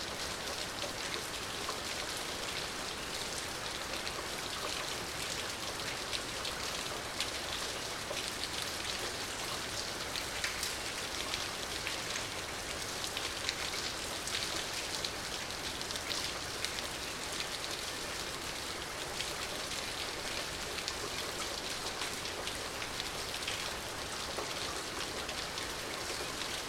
Calle Carreteros, Humanes de Madrid, Madrid, España - Lluvia solitaria (Lonely rain)
Un día lluvioso en uno de los días de confinamiento por COVID 19, puede que la lluvia mas solitaria en mucho tiempo... Captura de sonido con grabadora ZOOM h1n
(A rainy day on one of the days of confinement for COVID 19, may be the loneliest rain in a long time...)Sound capture with ZOOM h1n recorder